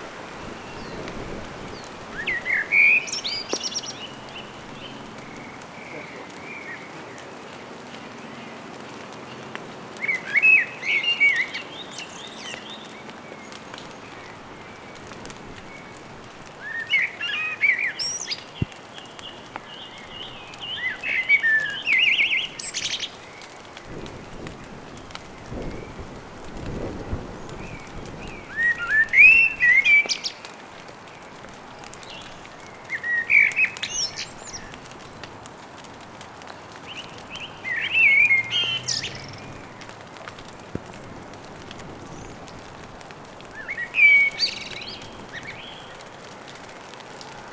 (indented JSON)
{
  "title": "Dartington, Devon, UK - soundcamp2015dartington blackbird",
  "date": "2015-05-02 17:00:00",
  "latitude": "50.45",
  "longitude": "-3.69",
  "altitude": "51",
  "timezone": "Europe/London"
}